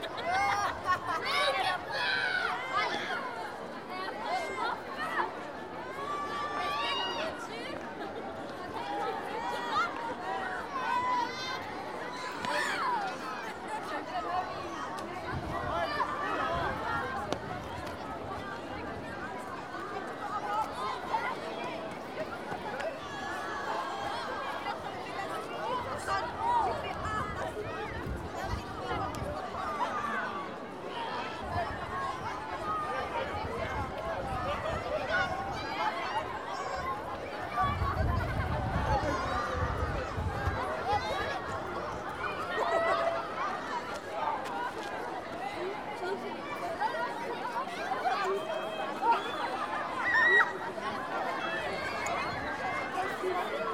Cour, collège de Saint-Estève, Pyrénées-Orientales, France - Récréation, ambiance 1
Preneuse de son : Justine